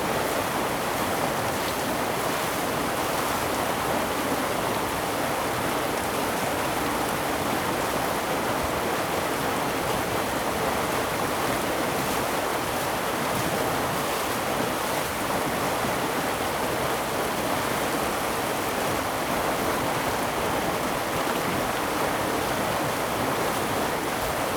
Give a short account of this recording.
Waterwheel, Very Hot weather, Zoom H2n MS+XY